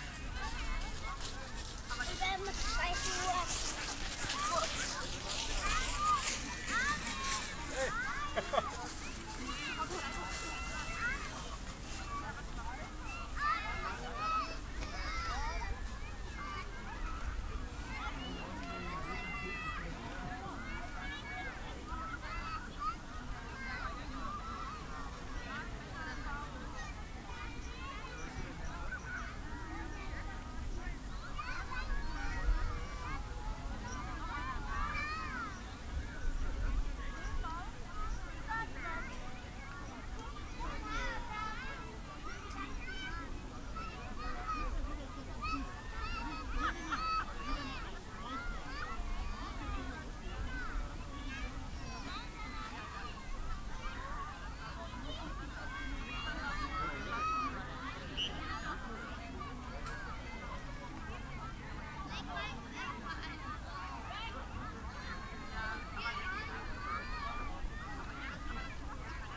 Berlin Tempelhofer Feld - kinderfest with kites
Berlin, Tempelhofer Feld, about 50 kids and their parents gathering, dozens of kites in the air
(SD702, DPA4060)